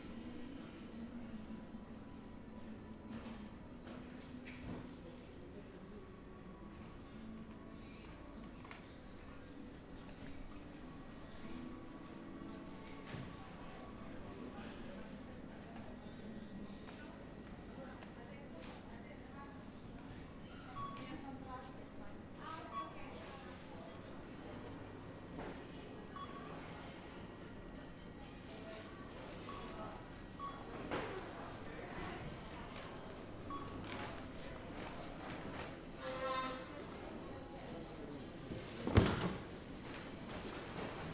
Grocery store.
Recorded with Zoom H4n
Nova Gorica, Slovenia